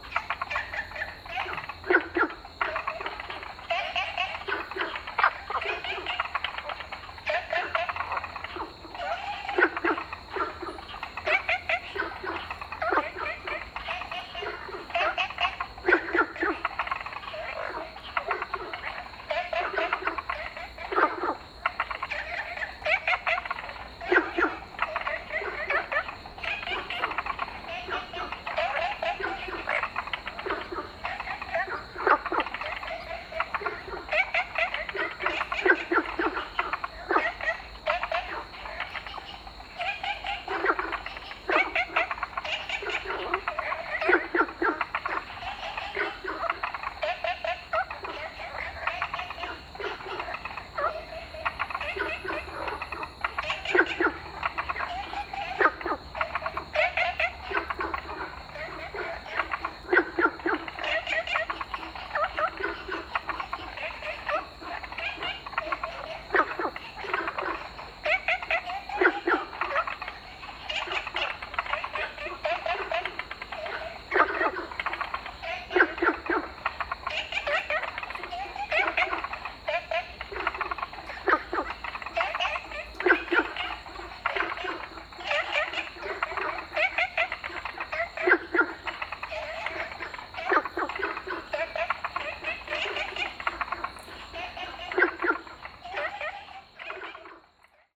Fuyang Eco Park, Taipei City - Frog sound
In the park, Frog sound
Zoom H2n MS+XY
Taipei City, Taiwan, 5 July